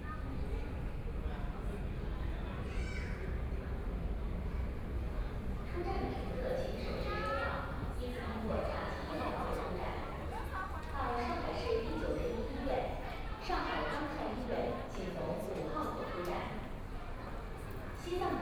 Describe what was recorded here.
Walking through the lobby out of the station platform station exit, The crowd gathered at the station exit and voice chat, Binaural recording, Zoom H6+ Soundman OKM II